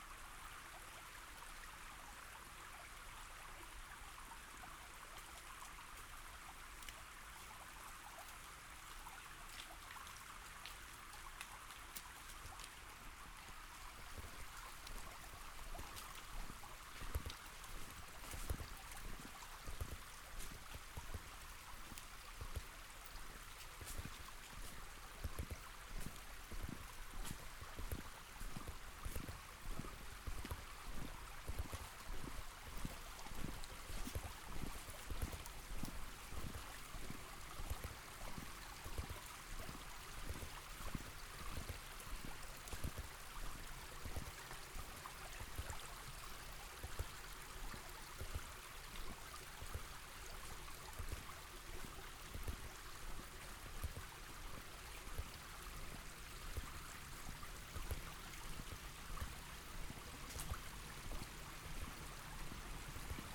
I was walking down the street from Alhambra, microphones attached to the backpack. Unfortunately the zipper of bag was clicking while walking. In the beginning you can hear the water streaming down the small channels both side of street.